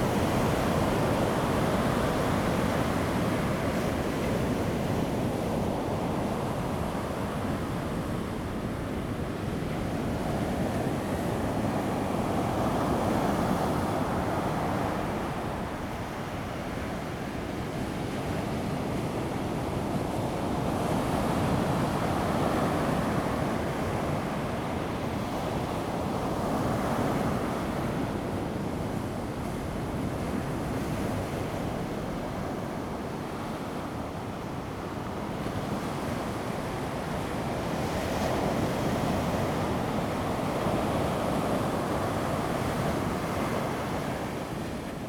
都蘭林場, Donghe Township - In the beach
In the beach, Sound of the waves, Very hot weather
Zoom H2n MS+ XY
Taitung County, Taiwan, 6 September 2014, ~12:00